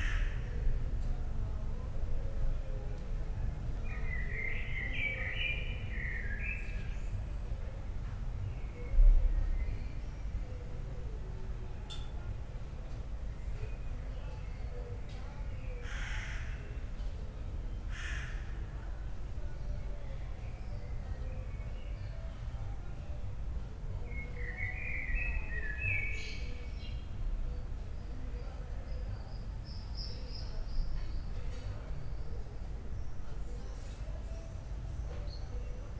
Berlin Bürknerstr., backyard window - spring evening ambience, open windows
spring evening ambience in backyard, sounds from inside, crows, blackbird
Berlin, Germany